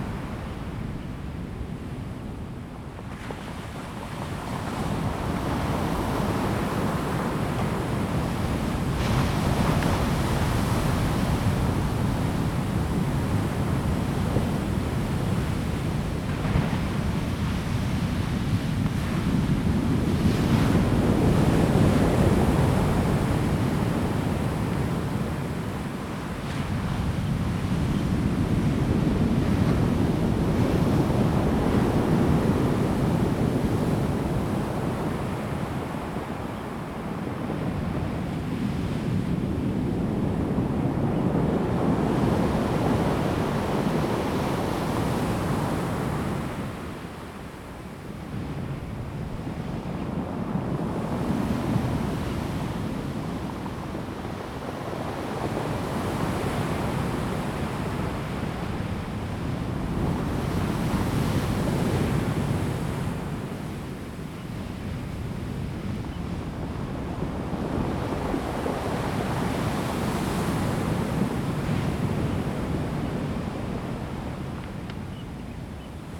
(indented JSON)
{
  "title": "Nantian Coast, 達仁鄉上南田 - the waves and Rolling stones",
  "date": "2018-03-28 08:27:00",
  "description": "Chicken crowing, Bird cry, Sound of the traffic, Sound of the waves, Rolling stones\nZoom H2n MS+XY",
  "latitude": "22.28",
  "longitude": "120.89",
  "altitude": "3",
  "timezone": "Asia/Taipei"
}